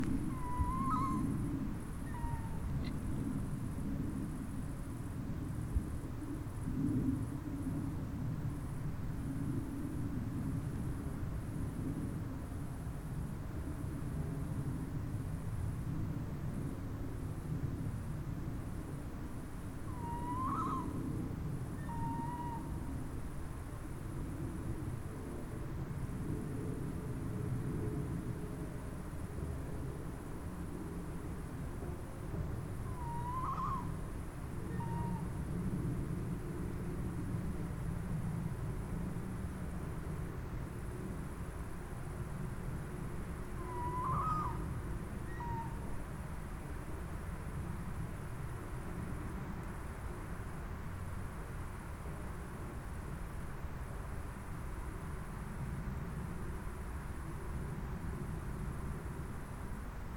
Piramida, Maribor, Slovenia - corners for one minute
one minute for this corner: Piramida